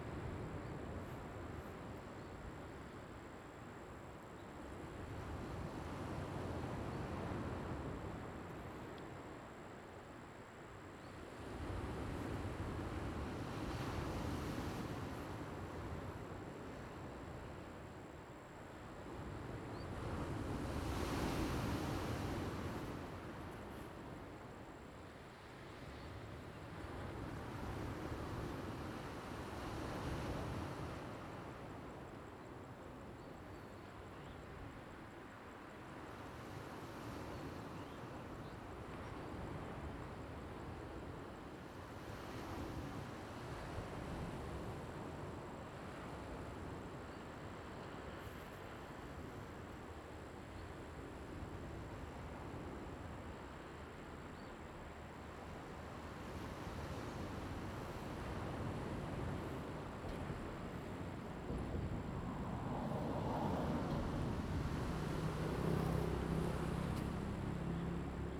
Traffic Sound, On the coast, Sound of the waves
Zoom H2n MS +XY